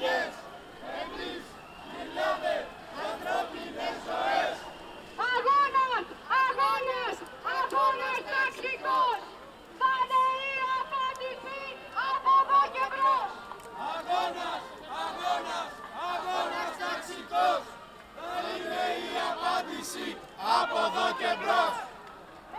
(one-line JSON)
{"title": "Athens. Protesters passing by the parliament - 05.05.2010", "date": "2010-05-05 13:44:00", "latitude": "37.98", "longitude": "23.74", "altitude": "96", "timezone": "Europe/Athens"}